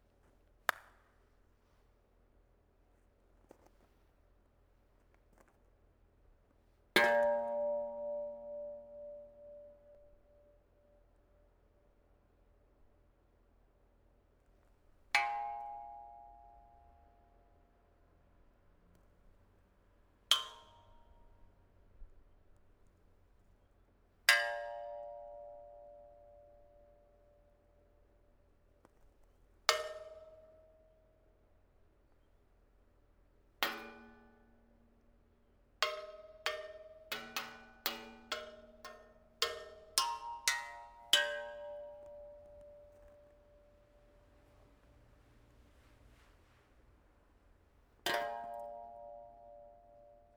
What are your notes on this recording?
equipment used: Microtrack II, XY pair of AT3035s